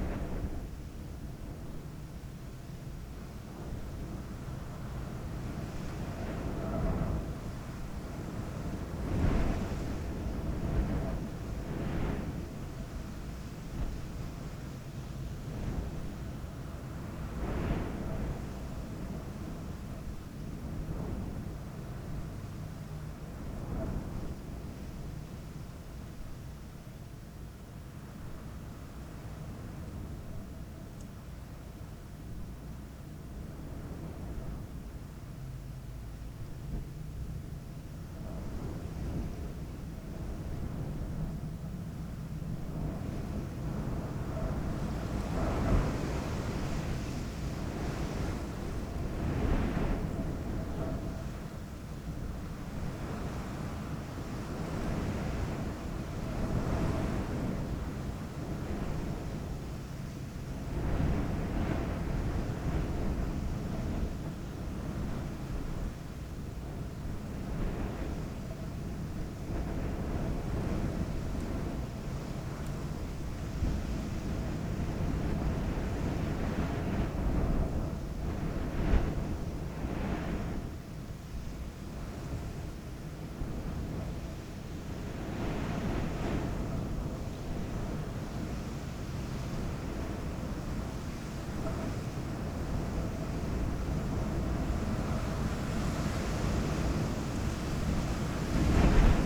Cafe Tissardmine, Tissardmine, Marokko - Desert Wind
A sand storm at Cafe Tissardmine, recorded with two AKG SE 300B placed by each window.
Tisserdmine, Morocco, 3 April 2019, 14:30